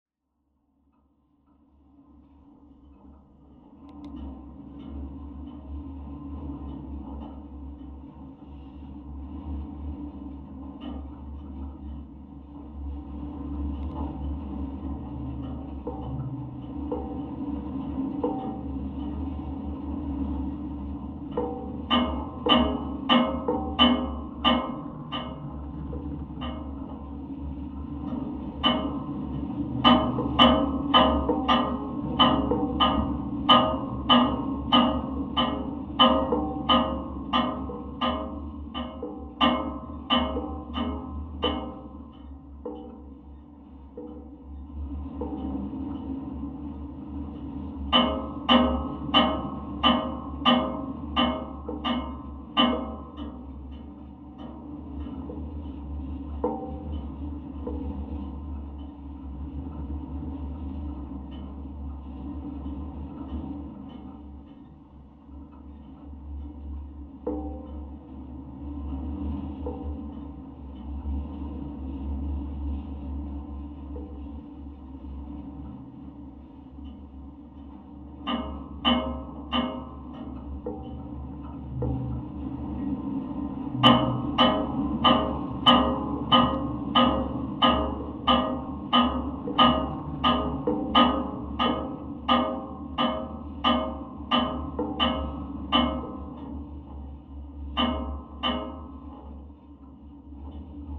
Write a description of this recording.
Wind in an antenna slams the line. Recorded with a mono contact microphone.